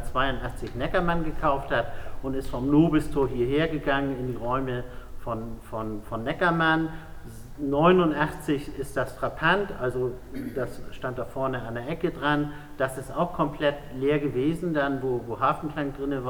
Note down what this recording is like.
Erdgeschoss Frappant, Große Bergstraße, Hamburg